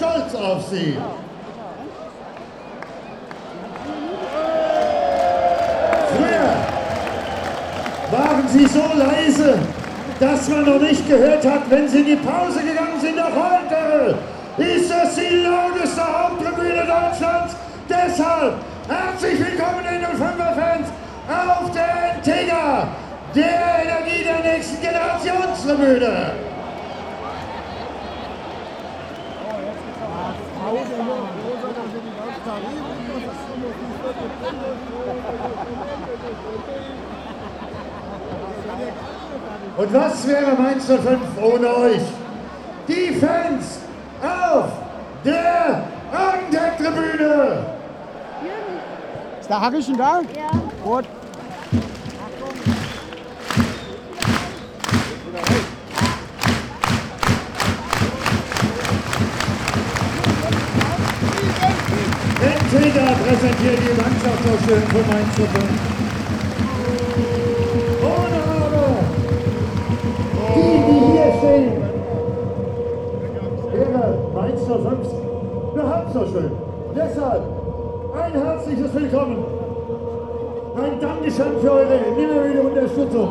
mainz: stadion am bruchweg - the city, the country & me: football stadium of fsv mainz 05, south stands
before the football match mainz 05 - hamburger sv, footbal fans of mainz 05, stadium commentator introducing the teams of hamburger sv and mainz 05
the city, the country & me: october 16, 2010